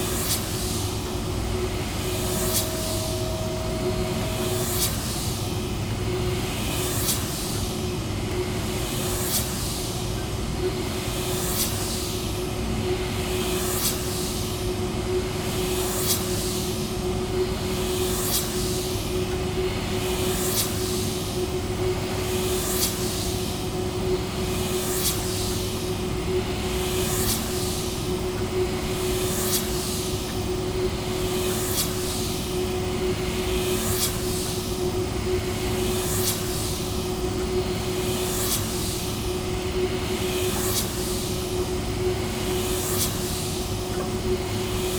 Hautevesnes, France - Wind turbine
The wind turbines are often near the motorways. It's not easy to record. Here in the Aisne area fields, it was a very good place to listen to the wind, as it's noiseless. So, here is a wind turbine during a quiet sunday morning.